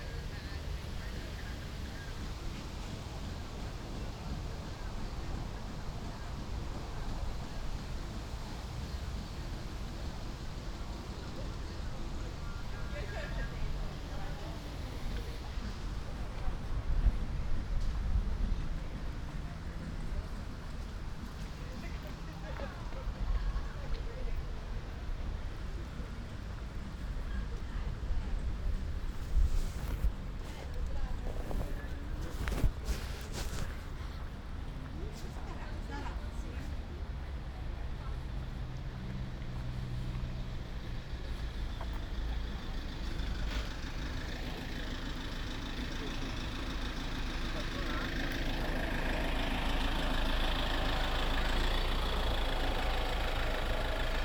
"Valentino Park Friday afternoon summer soundwalk and soundscape 17 (3) months later in the time of COVID19": soundwalk & soundscape
Chapter CLXXXII of Ascolto il tuo cuore, città. I listen to your heart, city
Friday, August 27th, 2021. San Salvario district Turin, to Valentino park and back, long time after emergency disposition due to the epidemic of COVID19.
Start at 3:19 p.m. end at 4:12 p.m. duration of recording 52’51”
Walking to a bench on riverside where I stayed for few minutes.
As binaural recording is suggested headphones listening.
The entire path is associated with a synchronized GPS track recorded in the (kmz, kml, gpx) files downloadable here:
Similar paths:
10-Valentino Park at sunset soundwalk and soundscape
171-Valentino Park at sunset soundwalk and soundscape 14 months later
Piemonte, Italia